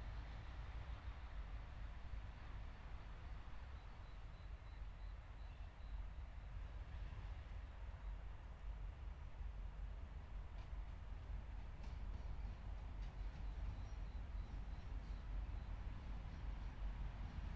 Kingston ACT, Australia - Christmas Party Train Arriving
15.12.2013 ARHS ACT ran a special Christmas party train from Canberra to Tarago and back.Here it is arriving back at Canberra station led by locomotives 4403, FL220 and 4807. The sounds of the disco carriage can be heard going past as can the generator mounted in a container as the rear of the train comes to a stand before the Zoom H4n.